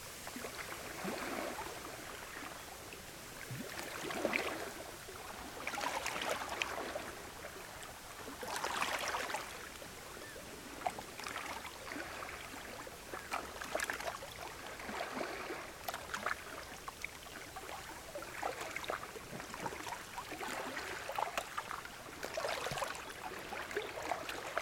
waves of Nida, lagoon with reeds
waves of Nida water sounds
14 November 2011, ~14:00